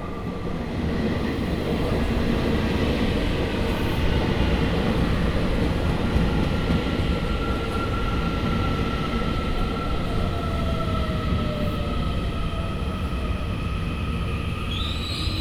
Xiangshan Station, Xiangshan District - Train traveling through
Train traveling through, in the station platform